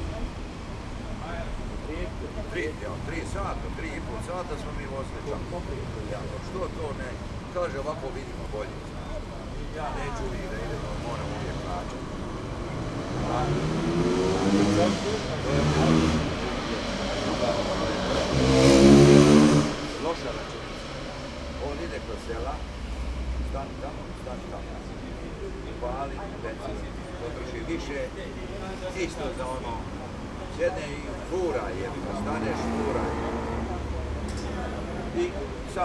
venloer / simrockstr. - street sounds
sounds at the street corner